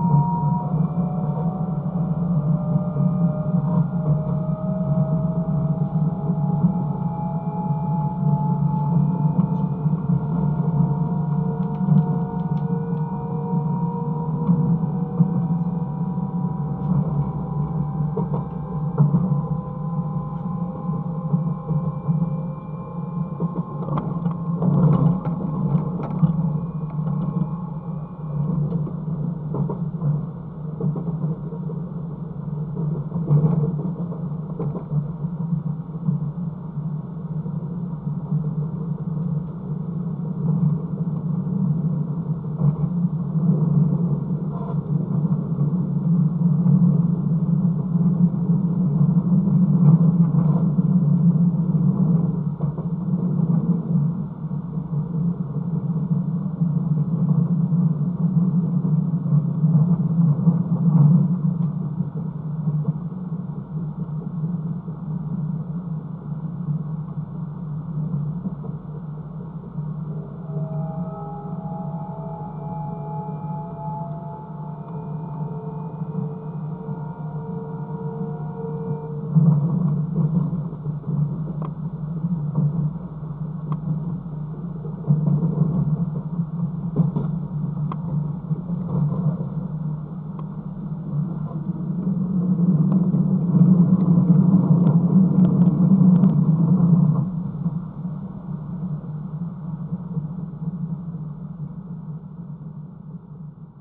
Plaisance, Paris, France - Train from Paris
A quite experimental sound, but I was wishing to do it just one time. This is a train going out from Paris, and recorded with contact microphones sticked on the train metallic structure. Switches are numerous here, that's why I recorded this train.